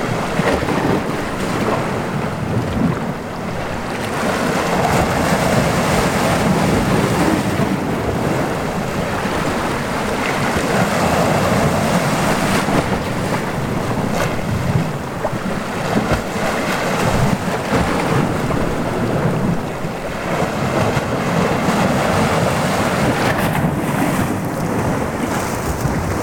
tondatei.de: cala llombards
wellen, meer, bucht, brandung